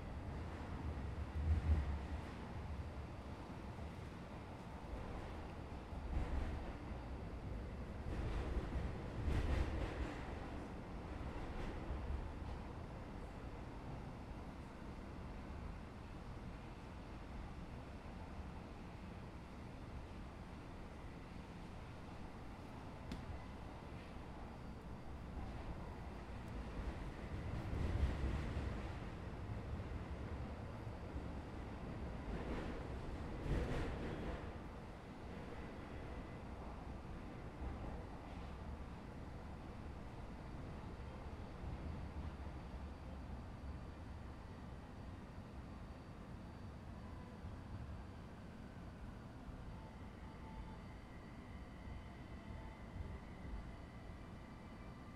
{"title": "Vila Madalena - R. Dr. Paulo Vieira, 010 - Sumarezinho, São Paulo - SP, 01257-010, Brasil - Metrô Vila Madalena", "date": "2018-09-26 12:00:00", "description": "#SaoPaulo #SP #Metro #VilaMadalena #Vila #Madalena #Underground #Subway", "latitude": "-23.55", "longitude": "-46.69", "altitude": "794", "timezone": "GMT+1"}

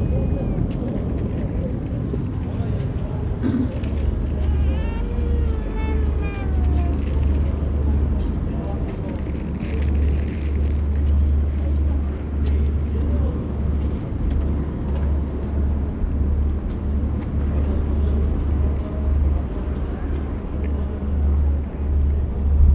{"title": "Národní Praha, Česká republika - Hlava", "date": "2014-11-19 13:26:00", "description": "Ambience of the little square behind the new bussine center Quadro with kinetic huge sculpture by David Černý.", "latitude": "50.08", "longitude": "14.42", "altitude": "207", "timezone": "Europe/Prague"}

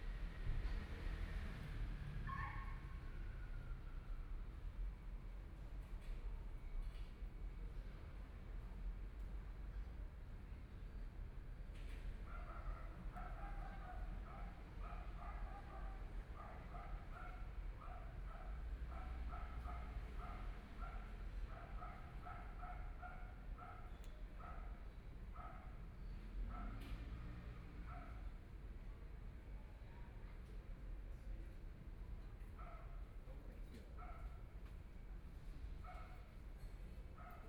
{"title": "DaZhi Park, Taipei City - The park at night", "date": "2014-02-16 18:41:00", "description": "sitting in the Park, The park at night, Community-based park, Dogs barking, Traffic Sound, Binaural recordings, Zoom H4n+ Soundman OKM II", "latitude": "25.08", "longitude": "121.55", "timezone": "Asia/Taipei"}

